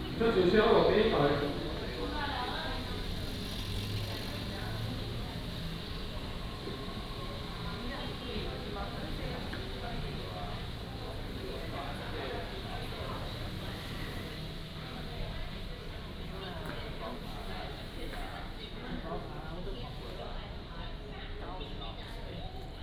新營客運新營站, Xinying Dist. - In the lobby of the passenger station
At the passenger terminal, Traffic sound, Station broadcasting
Xinying District, Tainan City, Taiwan, 31 January 2017